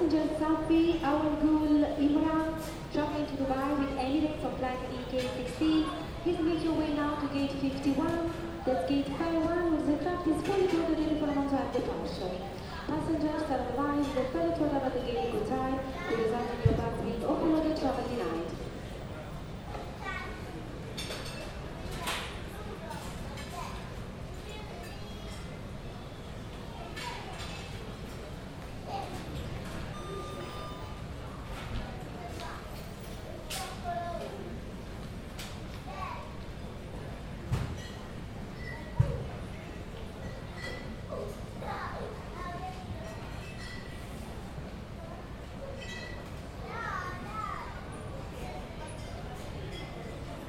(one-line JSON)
{"title": "UK - Ambience Gatwick Airport north terminal - 13 13 - 03 11 2010", "date": "2010-11-03 13:13:00", "description": "waiting for my flight. The screaming Italian family; people missing the plane and I am about there...", "latitude": "51.16", "longitude": "-0.18", "altitude": "69", "timezone": "Europe/London"}